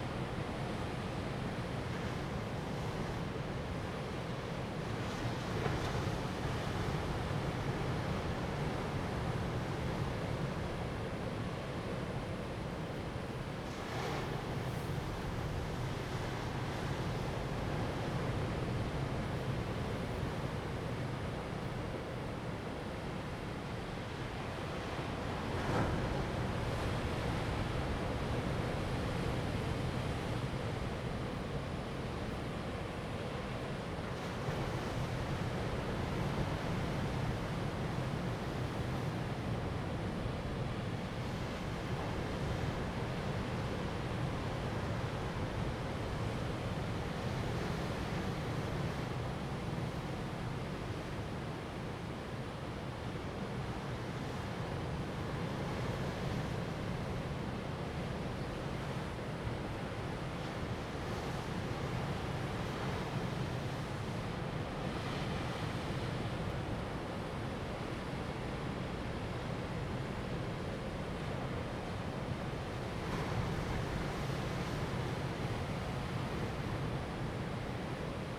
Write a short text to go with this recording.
On the coast, Sound of the waves, Zoom H2n MS +XY